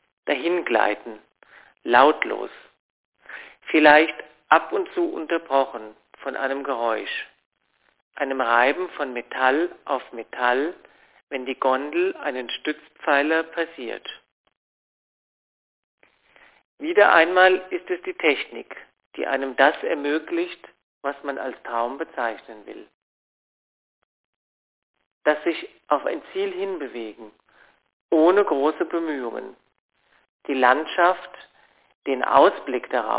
kitzsteinhorn kaprun - radio aporee ::: maps 11.04.2007 23:07:26
Stubach, Austria